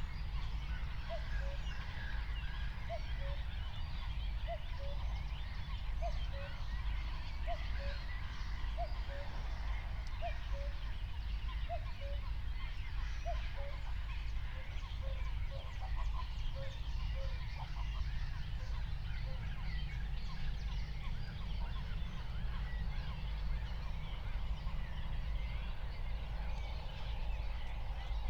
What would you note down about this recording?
04:00 Berlin, Buch, Moorlinse - pond, wetland ambience